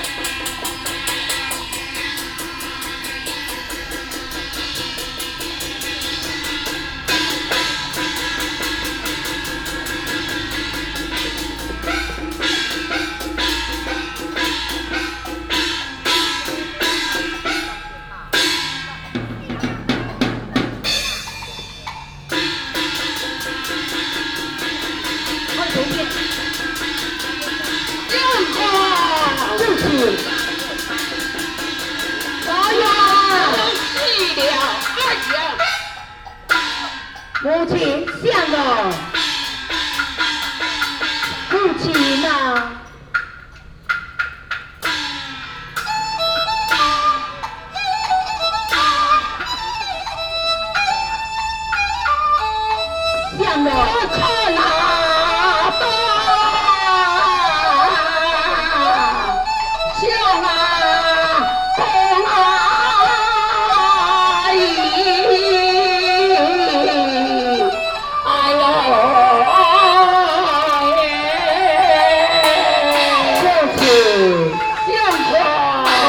義勇廟, Xinpu Township - In the square of the temple
In the square of the temple, Hakka Opera, Binaural recordings, Sony PCM D100+ Soundman OKM II